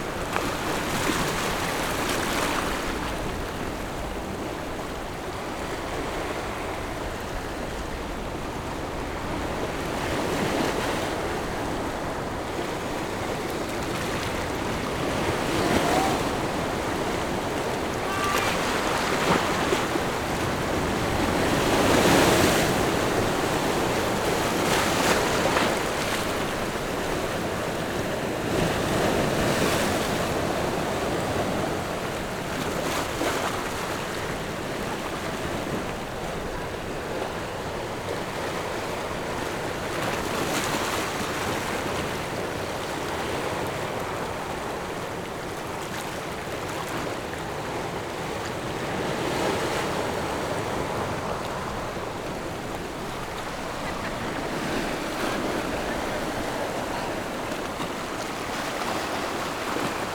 San Diego, New Taipei City - Sound of the waves
On the coast, Sound of the waves
Zoom H6 MS mic+ Rode NT4